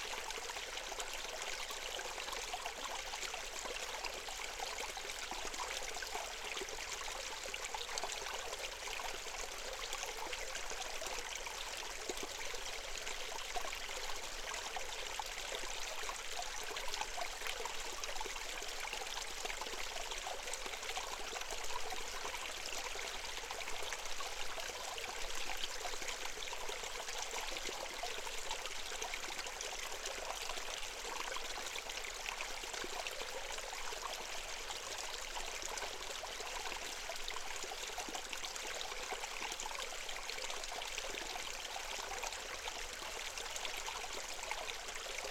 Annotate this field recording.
surely, this newly discovered ancient Artmaniskis mound becomes my favourite place. it is unreachable by cars, so for this reason it is somekind "in wilderness"